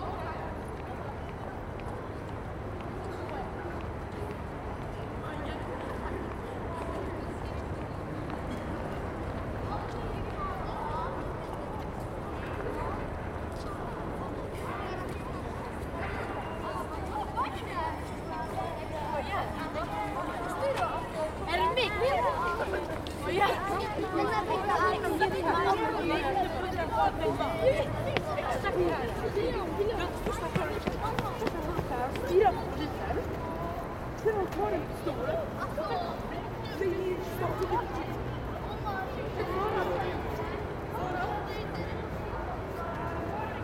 SERGELS TORG, Stockholm, Sweden - Everyday city sounds
People walking on Sergels Square. Elementary school class passes by.
Recorded with Zoom H2n, 2CH setting, deadcat, handheld.
21 February